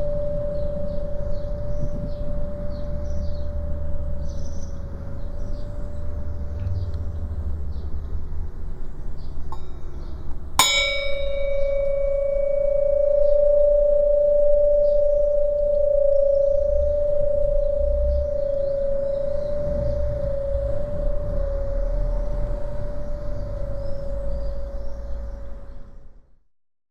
{
  "title": "Via Leone Amici, Serra De Conti AN, Italia - Resonating ancient metal applied to wall",
  "date": "2018-05-26 15:30:00",
  "description": "Sony Dr 100 as recorder, w/windscreen. Small, quite hidden location surrounded by ancient concrete. Resonating object: ancient metal support fixed on a wall, hit with a found tiny pot, moving mics closer. Slight Bandaxall Eq at 20khz on the dry recording for highs strenghtening after the windscreen, added a quasi-fixed phaser and parallel compression on a parallel processed twin file for sound reinforcement.",
  "latitude": "43.54",
  "longitude": "13.04",
  "altitude": "215",
  "timezone": "Europe/Rome"
}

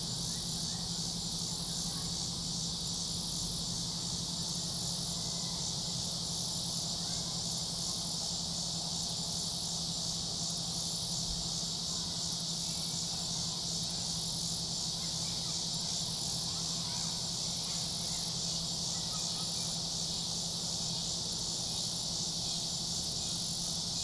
{
  "title": "Soccer Fields, Valley Park, Missouri, USA - Idling Train",
  "date": "2020-08-27 19:18:00",
  "description": "Incessant sound of cicadas with crescendos and decrescendos starting at 1:11. Train idles off to the left (heard best at 1:59) and children play soccer off to right.",
  "latitude": "38.55",
  "longitude": "-90.47",
  "altitude": "136",
  "timezone": "America/Chicago"
}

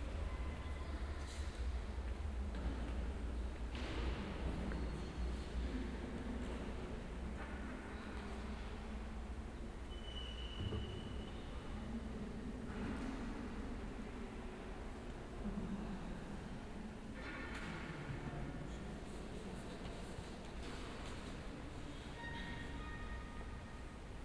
{
  "title": "Berlin Marienkirche - church ambience",
  "date": "2010-09-11 16:40:00",
  "description": "Marienkirche, church, ambience saturday afternoon, open for the public. binaural recording",
  "latitude": "52.52",
  "longitude": "13.41",
  "altitude": "49",
  "timezone": "Europe/Berlin"
}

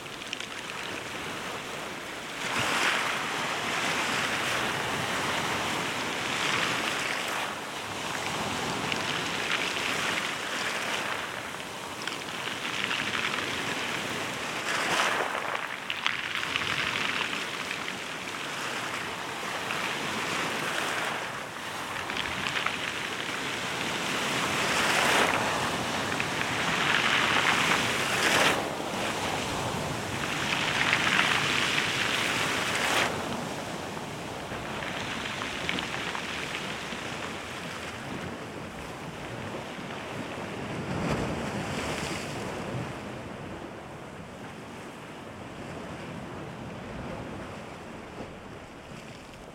{
  "title": "Sentier du littoral par Ault, Bd Circulaire, Ault, France - Ault",
  "date": "2020-06-16 15:00:00",
  "description": "Ault (Département de la Somme)\nAmbiance au flanc des falaises",
  "latitude": "50.10",
  "longitude": "1.44",
  "altitude": "19",
  "timezone": "Europe/Paris"
}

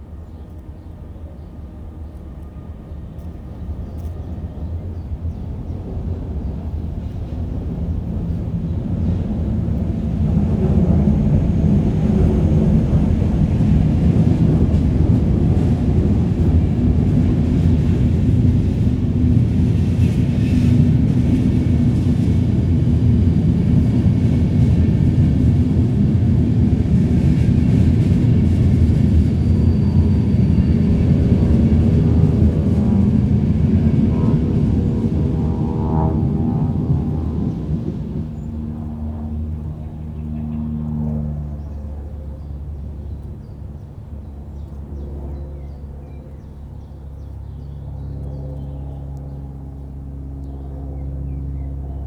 {
  "title": "Friedhof Grunewald, Bornstedter Straße, Berlin, Germany - Grunewald Cemetery - bees in the sunshine, trains pass by",
  "date": "2014-06-15 13:05:00",
  "description": "Sunday. This family grave has 8 Greek columns, not too high, around an octagonal shaped lawn of bright yellow flowers. Different bees buzz around collecting pollen in the sun, red bottomed, black and yellow stripped. The first train is one of the new S-Bahn designs, sadly not as interesting sounding as those being replaced.",
  "latitude": "52.50",
  "longitude": "13.28",
  "altitude": "45",
  "timezone": "Europe/Berlin"
}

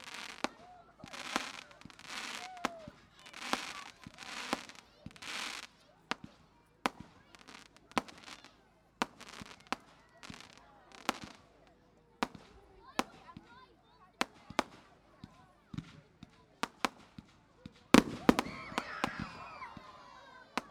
{
  "title": "South Stoke, Oxfordshire, UK - South Stoke Fireworks",
  "date": "2015-11-06 19:30:00",
  "description": "A selection of fireworks from 'South Stoke Fireworks Spectacular'. Recorded using the built-in microphones on a Tascam DR-05.",
  "latitude": "51.55",
  "longitude": "-1.13",
  "altitude": "51",
  "timezone": "Europe/London"
}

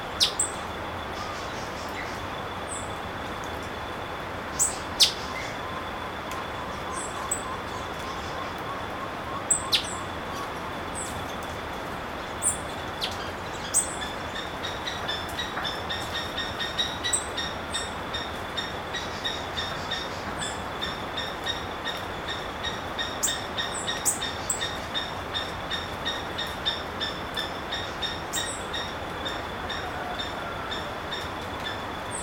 {
  "title": "Tangará, Rio Acima - MG, 34300-000, Brasil - Seriema",
  "date": "2021-02-24 04:14:00",
  "description": "Seriema bird on early morning in the interior of Minas Gerais, Brazil.",
  "latitude": "-20.11",
  "longitude": "-43.73",
  "altitude": "1076",
  "timezone": "America/Sao_Paulo"
}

